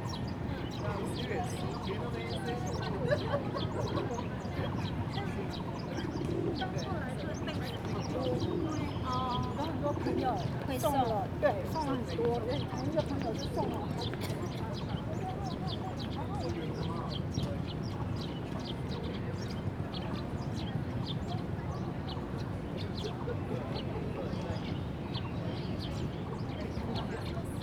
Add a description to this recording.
Chirp, Goose calls and Birds sound, Footsteps, pigeon, Bell sound, Zoom H2n MS+XY